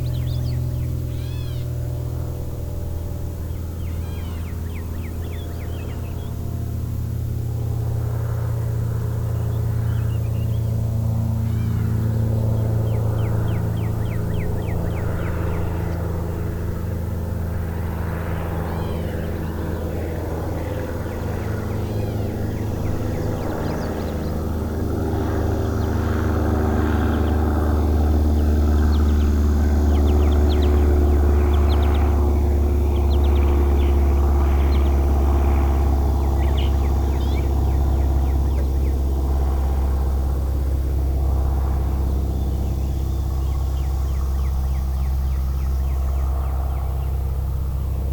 Grass Lake Sanctuary - Nature Trail sounds
Sitting on a bench on the
"red" nature trail...an airplane joins the animal sounds...
Manchester, Michigan USA